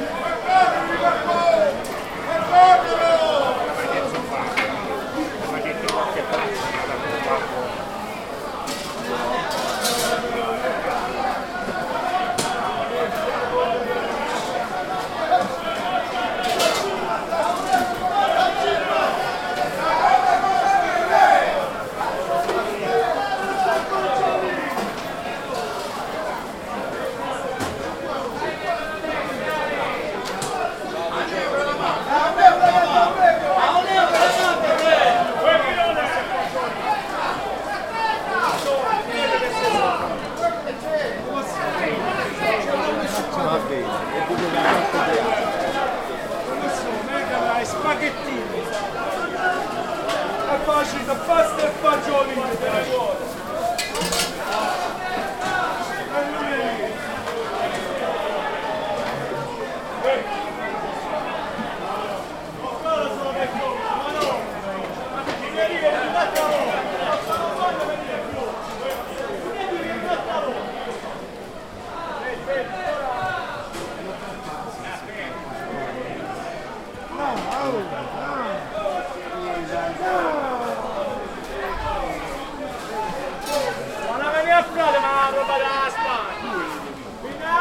Taranto Market
WLD, Taranto, Market, Fadini, people, voices, traffic
Taranto, Italy, 11 July